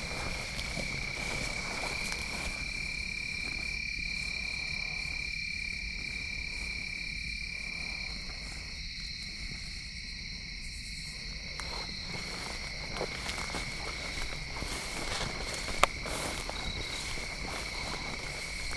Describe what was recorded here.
Crossing a field full of singing insects to reach a pond. Green frogs in pond.